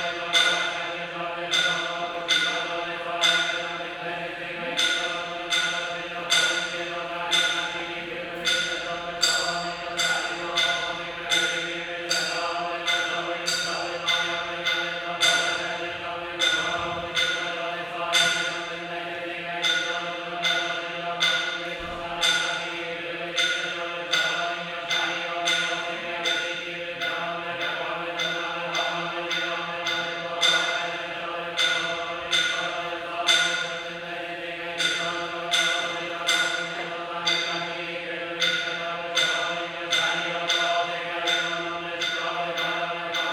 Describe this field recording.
Buddhist monks doing their chants in a temple. (roland r-07)